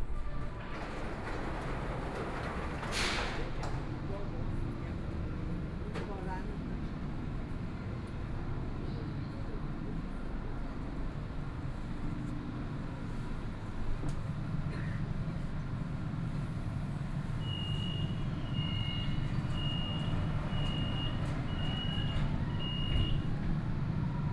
Yangpu District, Shanghai - Line 8 (Shanghai Metro)
from Huangxing Park Station to Middle Yanji Road Station, Binaural recording, Zoom H6+ Soundman OKM II